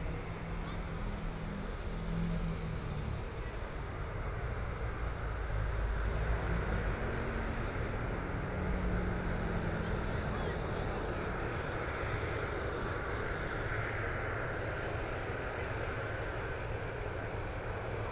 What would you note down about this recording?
A lot of people are waiting to watch planes take off and land, Aircraft flying through, Traffic Sound, Binaural recordings, ( Proposal to turn up the volume ), Zoom H4n+ Soundman OKM II